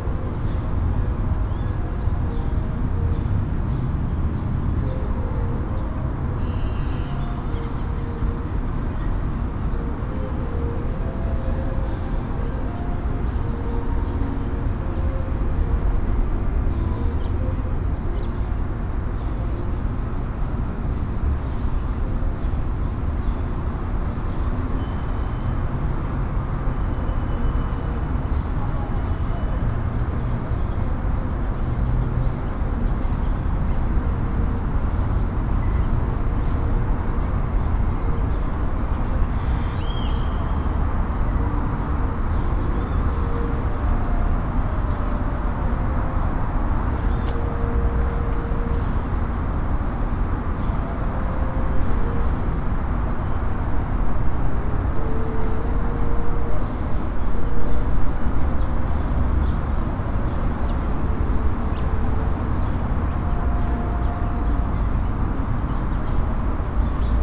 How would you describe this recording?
Forum Cultural Guanajuato, Calzada de las Artes. Everyday environmental sound in the Calzada del Forum Cultural Guanajuato where you can distinguish the sound of birds, people passing by, background music from the speakers of the place, and some vehicles on the street. I made this recording on june 3rd, 2022, at 1:06 p.m. I used a Tascam DR-05X with its built-in microphones and a Tascam WS-11 windshield. Original Recording: Type: Stereo, Sonido ambiental cotidiano en la Calzada del Forum Cultural Guanajuato donde se alcanza a distinguir el sonido de los pájaros, gente que va pasando, música de fondo de las bocinas del lugar y algunos vehículos en la calle. Esta grabación la hice el 3 de junio de 2022 a las 13:06 horas.